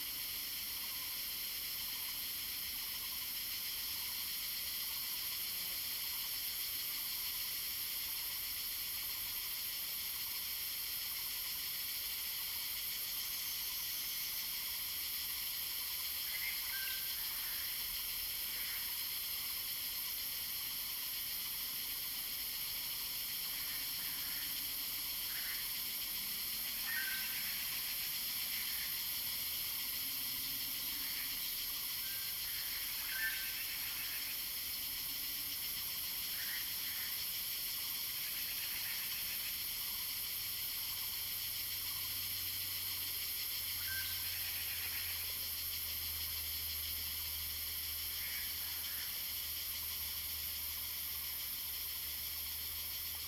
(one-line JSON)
{"title": "投64號縣道, 五城村Yuchi Township - Bird sounds and Cicadas cry", "date": "2016-04-26 07:36:00", "description": "Bird sounds, Cicadas cry, In the woods\nZoom H2n MS+XY", "latitude": "23.93", "longitude": "120.89", "altitude": "775", "timezone": "Asia/Taipei"}